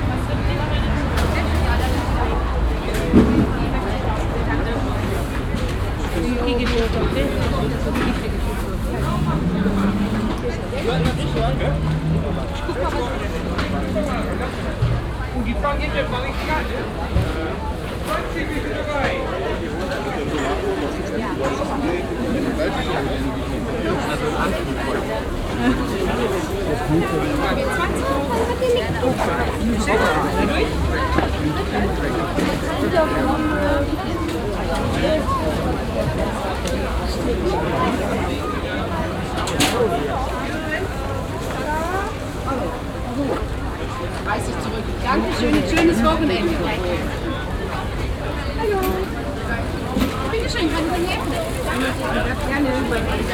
Am Rüttenscheider Markt zum Markt am Samstag. Die Klänge der Stimmen, Plastiktüten, Gespräche zwischen Kunden und Verkäufer. Im Hintergrund Strassenverkehr.
At the saturday market place. The sounds of voices - customers and sellers conversation, plastic bags. In The background street traffic .
Projekt - Stadtklang//: Hörorte - topographic field recordings and social ambiences